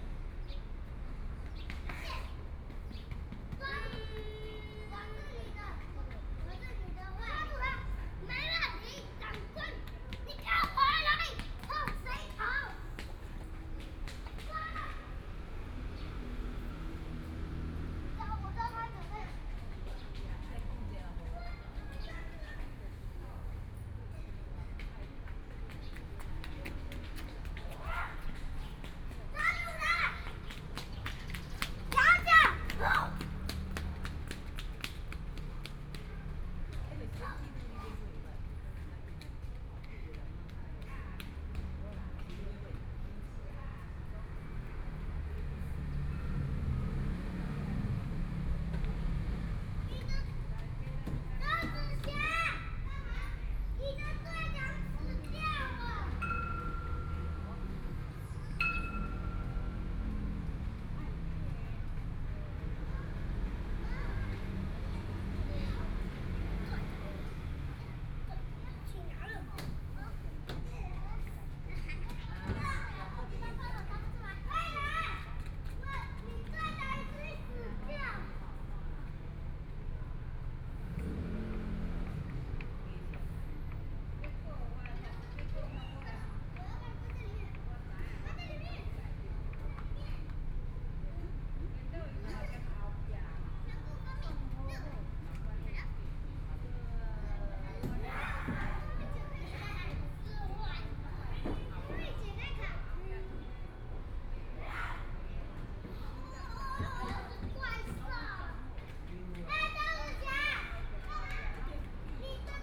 {"title": "DeHui Park, Taipei City - Child", "date": "2014-02-28 18:09:00", "description": "The elderly and children, Children in the play area, in the Park\nPlease turn up the volume a little\nBinaural recordings, Sony PCM D100 + Soundman OKM II", "latitude": "25.07", "longitude": "121.53", "timezone": "Asia/Taipei"}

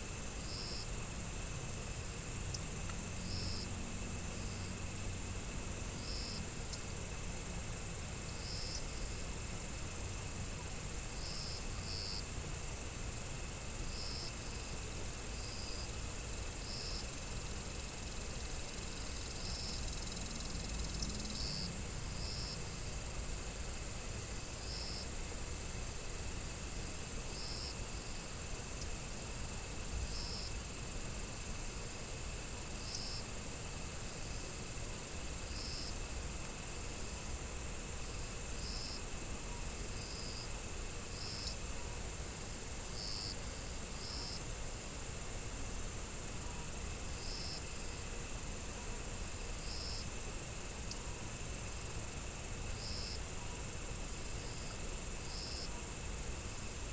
{"title": "느랏재 계곡 7월 Neuratjae valley at midnight July2020", "date": "2020-07-27 23:00:00", "description": "느랏재 계곡 7월_Neuratjae valley at midnight_July2020", "latitude": "37.90", "longitude": "127.81", "altitude": "218", "timezone": "Asia/Seoul"}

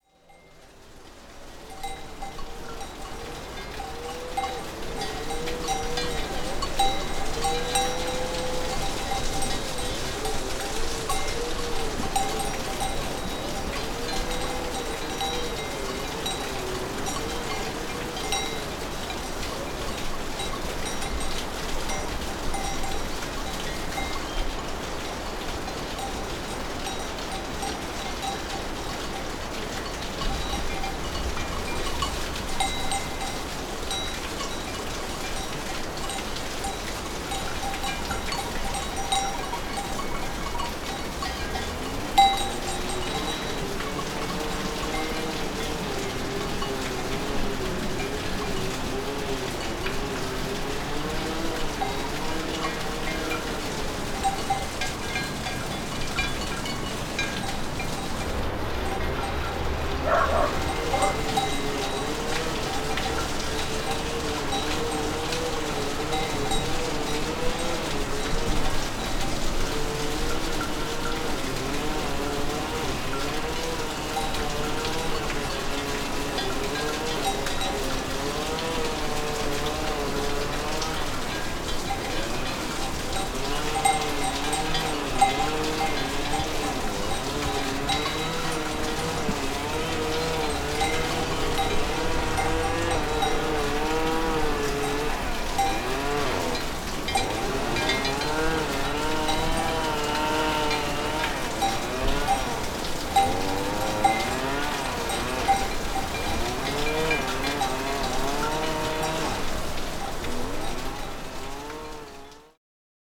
{"date": "2010-07-05 15:03:00", "description": "Pralognan, fountain, sheep and hedge trimmer.\nPralognan, une fontaine, des moutons et un taille-haie.", "latitude": "45.38", "longitude": "6.72", "altitude": "1428", "timezone": "Europe/Paris"}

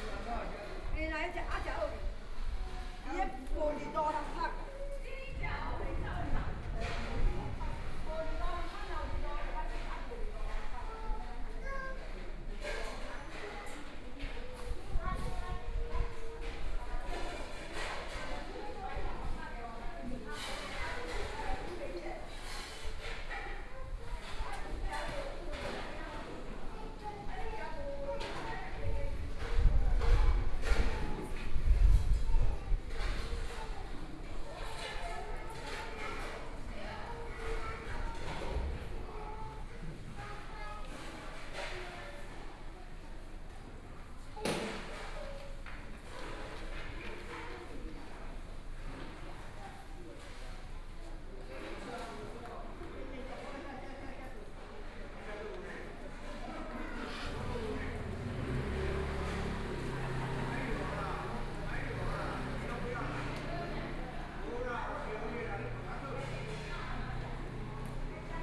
{"title": "普天宮, Fangyuan Township - Next to the temple", "date": "2014-03-08 13:15:00", "description": "Chat between elderly\nBinaural recordings", "latitude": "23.93", "longitude": "120.32", "timezone": "Asia/Taipei"}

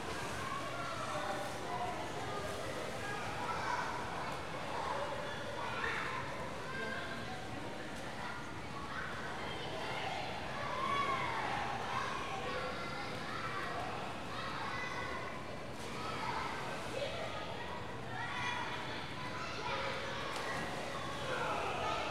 {"title": "Mountlake Terrace Pool - Swimming Pool", "date": "1999-04-17 16:32:00", "description": "A popular community pool is packed on the first sunny day of spring vacation.\nMajor elements:\n* Kids yelling, running, playing, splashing, jumping in\n* Lifeguards trying to keep order\n* Diving board\n* Water basketball game\n* Parents in the water & on deck\n* The whoosh of the air circulation system", "latitude": "47.79", "longitude": "-122.31", "altitude": "150", "timezone": "America/Los_Angeles"}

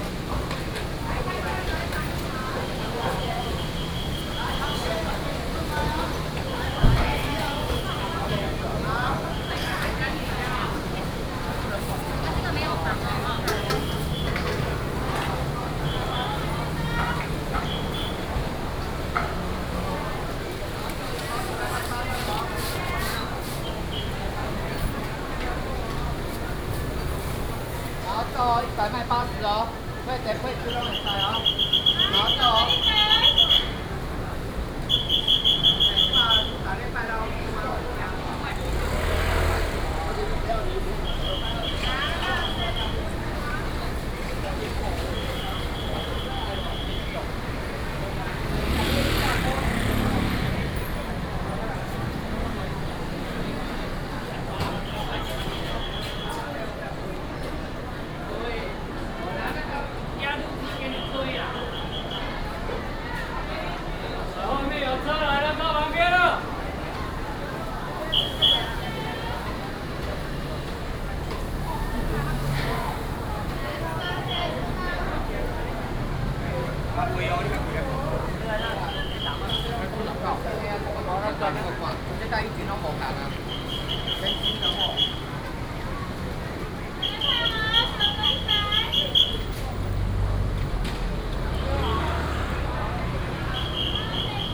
{"title": "三元黃昏市場, Yingge Dist., New Taipei City - Walking in the traditional market", "date": "2017-08-05 17:00:00", "description": "Walking in the traditional market, traffic sound, Command the whistle of traffic", "latitude": "24.97", "longitude": "121.32", "altitude": "110", "timezone": "Asia/Taipei"}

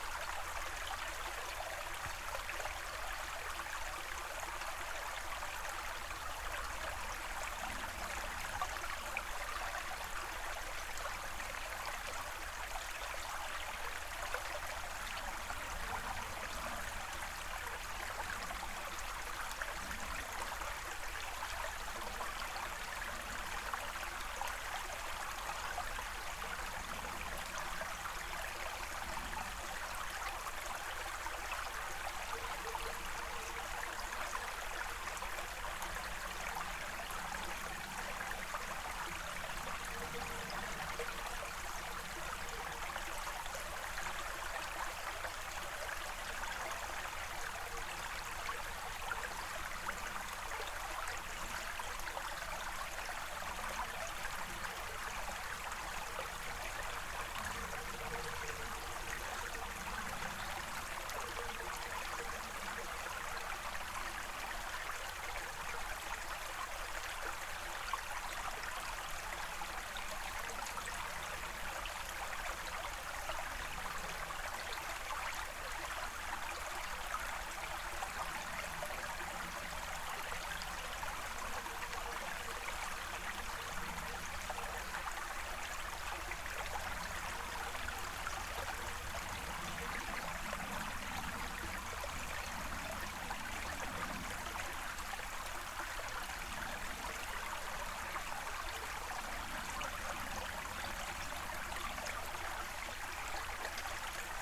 {"title": "Bowen's Creek, Pleasanton Township, MI, USA - Bowen's Creek - February", "date": "2016-02-08 13:55:00", "description": "Bowen's Creek on a Monday afternoon, running westward and freely over twigs and small roots and tree limbs. Recorded about a foot back from creek's edge, approx. three feet above. Snow on the ground. Stereo mic (Audio-Technica, AT-822), recorded via Sony MD (MZ-NF810, pre-amp) and Tascam DR-60DmkII.", "latitude": "44.46", "longitude": "-86.16", "altitude": "232", "timezone": "America/Detroit"}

